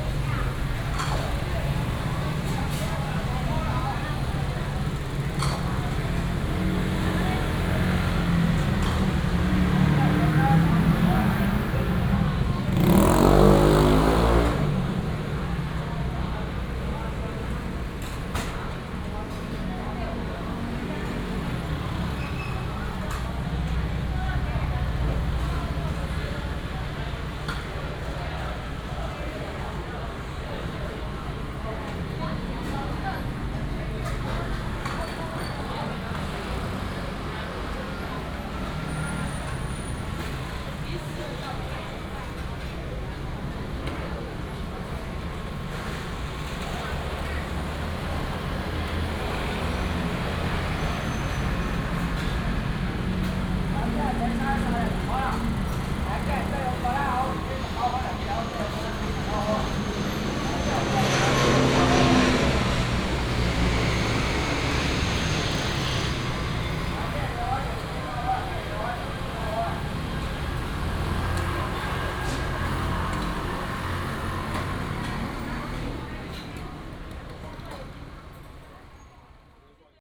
蔡家沙茶羊肉, Datong Dist., Taipei City - Outside the restaurant
Outside the restaurant, Traffic sound, Wash the dishes